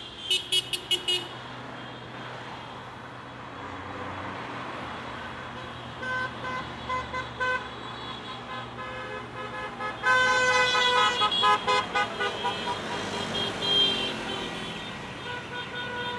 Michelet Taine, Marseille, France - Marseille - Boulevard Michelet - Euro 2016
Marseille - Boulevard Michelet
Demi finale Euro 2016 - France/Allemagne
fin de match